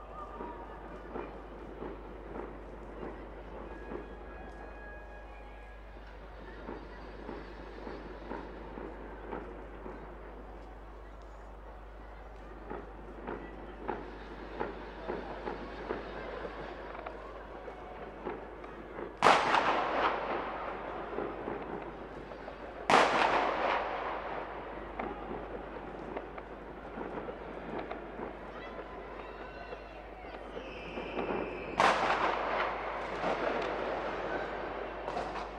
Lisbon, Portugal - New year 2016 celebrations
New year celebrations (2016), people shounting, kids yelling, motorcycle roaring, fireworks close and in the distance. Recorded in a MS stereo configuration (oktava MK012 cardioid mic + AKG CK94) into a Tascam Dr-70d.